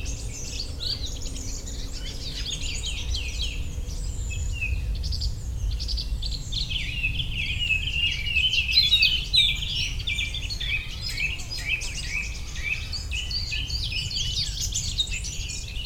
Pyrimont, France - Living forest
Living sound of the forest, with a lot of blackbirds talking between them, a few planes and a few sounds from the nearby village. The forest in this place is an inextricable coppice !